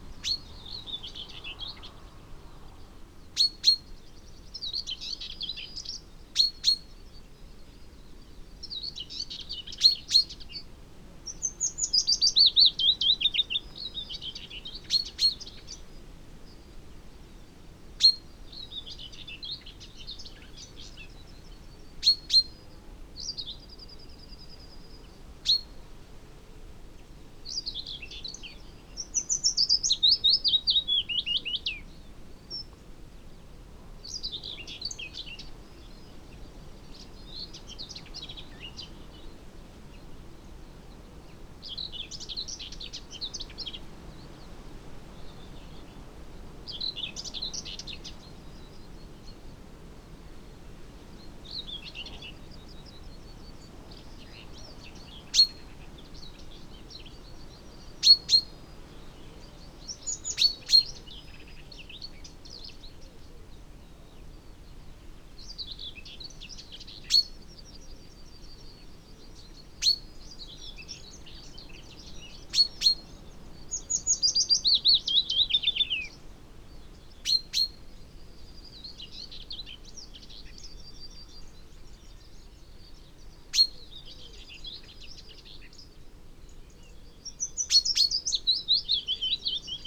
Green Ln, Malton, UK - willow warbler song soundscape ... wld 2019 ...
Willow warbler song soundscape ... SASS on tripod ... bird song ... calls ... from ... whitethroat ... crow ... yellowhammer ... wood pigeon ... chaffinch ... robin ... background noise ...